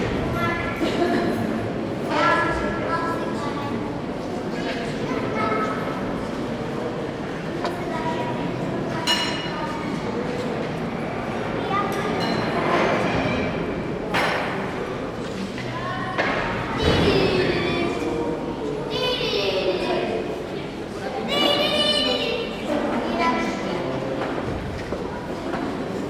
Václavská pasáž - Café
In the café of the Václavská passage.